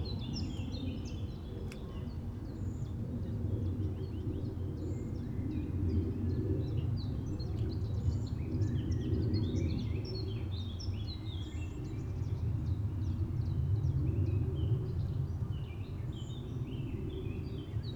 Labussee, Canow, Deutschland - lake ambience /w aircraft and helicopter
Labussee, on a boat, lake ambience, an aircraft crossing at 1:30, at 3:30 increasing drone of a helicopter, wiping out all other sounds.
(Sony PCM D50)
Mecklenburgische Seenplatte, Mecklenburg-Vorpommern, Deutschland